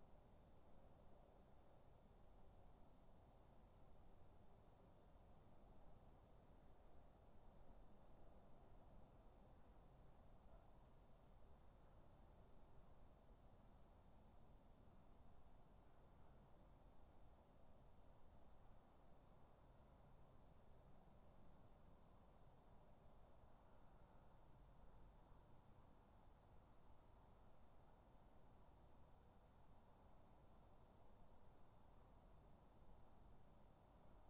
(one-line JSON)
{"title": "Dorridge, West Midlands, UK - Garden 24", "date": "2013-08-14 02:00:00", "description": "3 minute recording of my back garden recorded on a Yamaha Pocketrak", "latitude": "52.38", "longitude": "-1.76", "altitude": "129", "timezone": "Europe/London"}